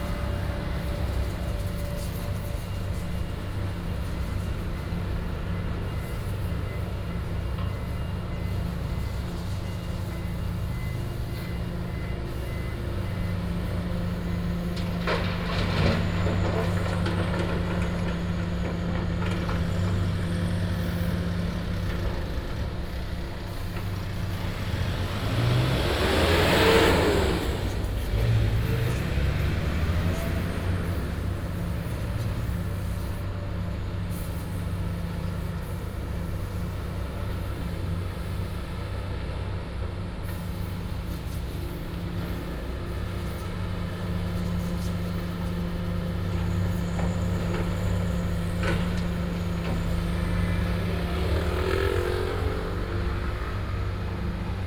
Construction site, Excavator, Traffic sound
Taoyuan City, Taiwan